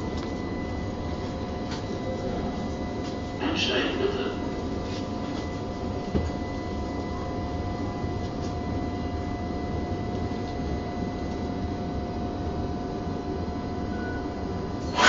Moritzplatz Untergrund
Der Zugverkehr auf der Linie 8 ist zur Zeit unregelmäßig. Das Leben ist unregelmäßig.
Berlin, Deutschland, European Union